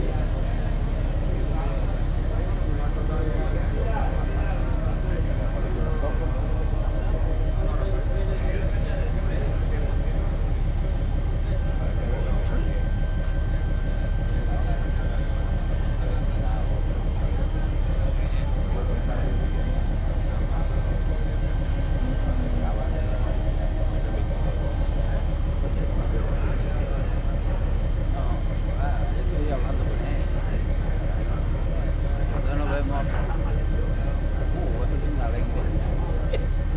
Fuengirola, España - Llega el primer barco con sardinas / First boat arrives with the sardines
Llega el primer barco con sardinas y los subasteros discuten sobre el precio y la cantidad / First boat arrives with the sardines and people discussing about the price and quantity
Fuengirola, Spain, 18 July 2012